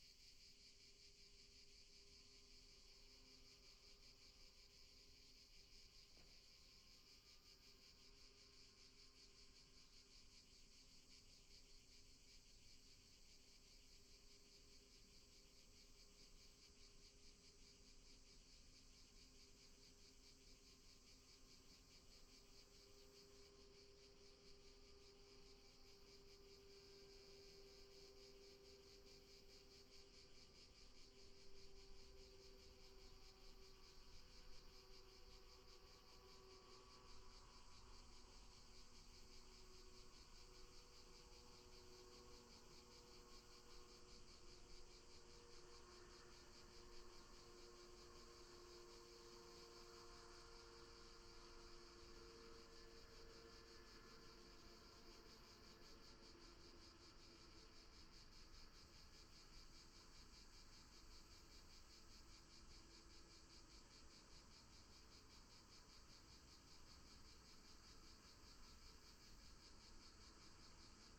Črnotiče, Črni Kal, Slovenia - Cargo train
Cargo train going up the hill and later one locomotive going down. Recorded with Lom Usi Pro.